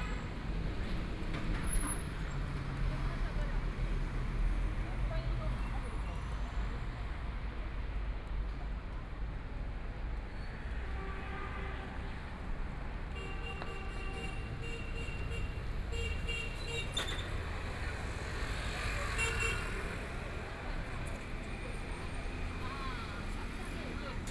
彰武路, Yangpu District - in the Street
University nearby streets, And from the sound of the crowd, Traffic Sound, Binaural recording, Zoom H6+ Soundman OKM II